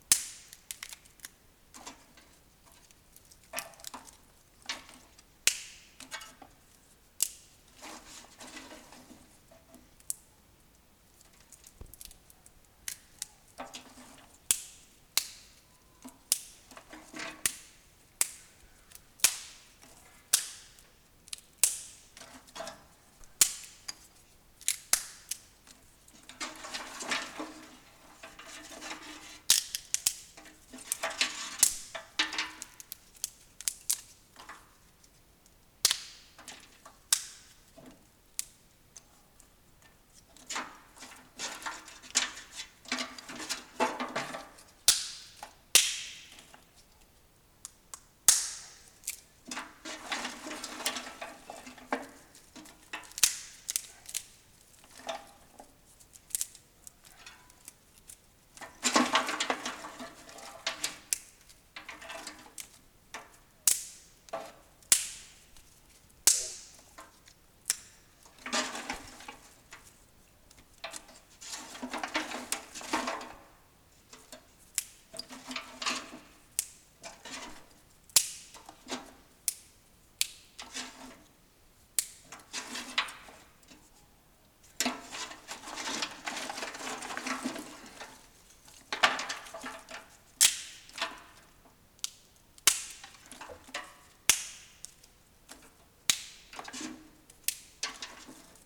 Snapping dry twigs in a metal bucket, inside the chapel at Churchill College, Cambridge UK. Sounds recorded for Menagerie Theatre's 2002-2003 production of Naomi Wallace's "The Retreating World".